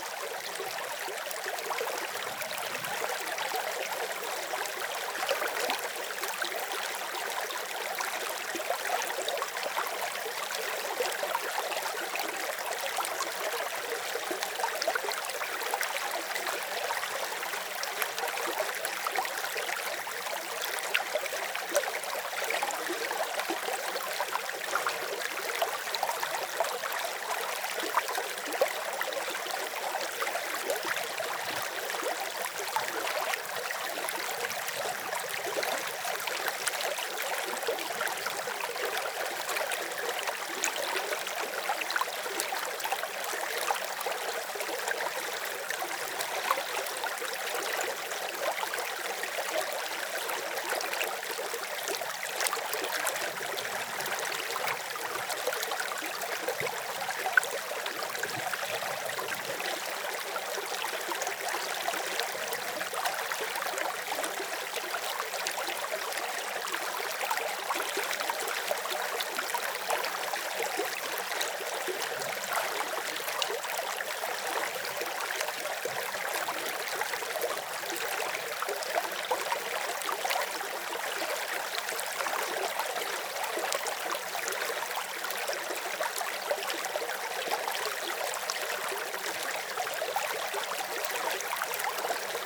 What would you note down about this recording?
From the mountain lake called Akna Lich (alt: 3025m), a small stream is flowing.